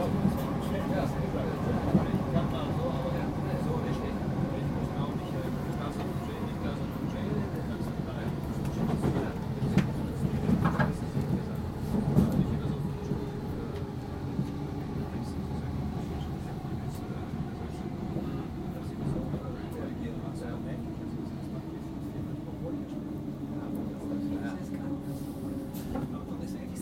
stansted express
train from stansted airport to london city.
recorded july 18, 2008.